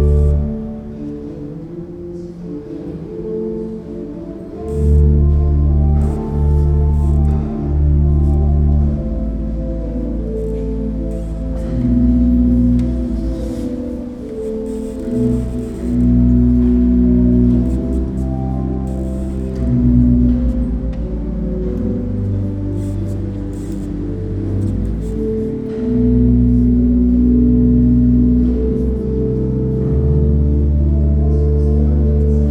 Senamiestis, Vilnius, Litouwen - Organ St. Anna church